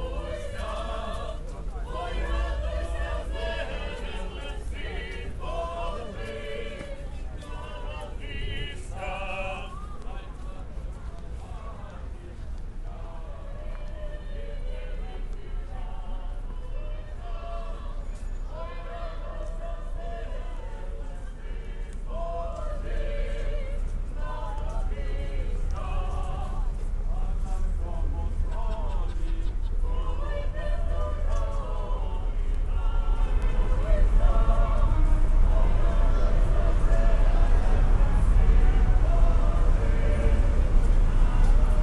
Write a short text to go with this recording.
Prospekt svobody. Traditionnal chants, ukrainian language, Singers gather during the period of christmas to share their chants with the population. They walk back and forth on the square, on the melted crispy snow